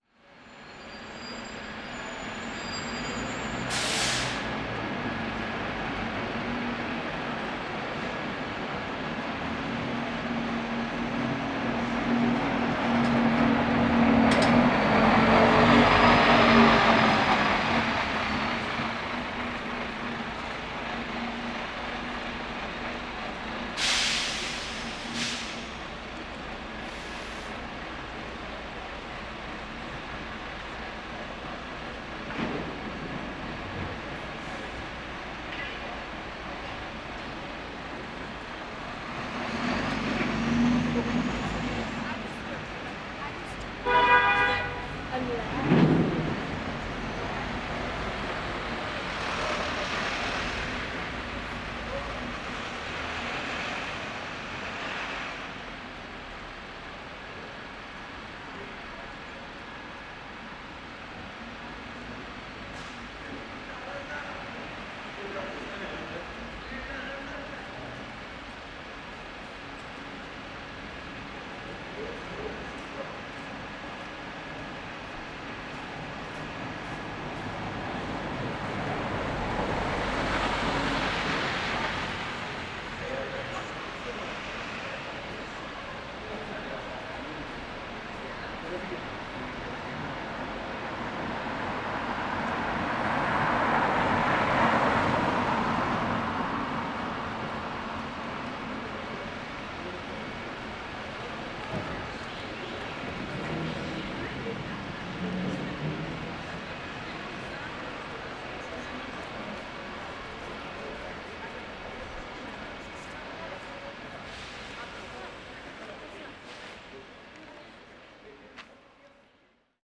Donegall Pl, Belfast, UK - Queens Arcade
Recording in a common space of shoppers, local buses driving in peak times of traffic, fewer people in the area, and space becomes much quieter. Beginning of Lockdown 2 in Belfast.
16 October 2020, ~18:00